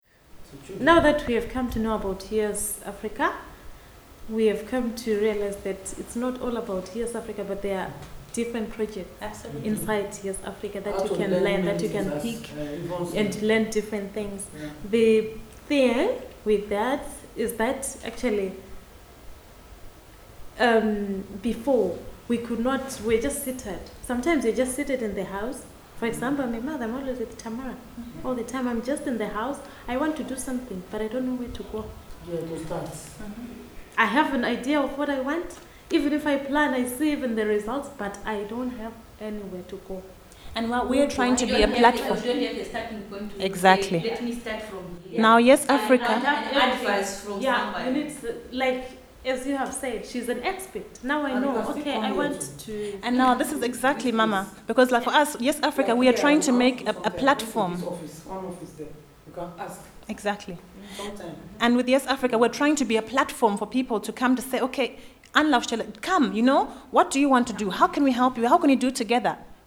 VHS, Hamm, Germany - I want to do something....
Khanyie picks up... raises question... how can we come together and support each other...
July 2014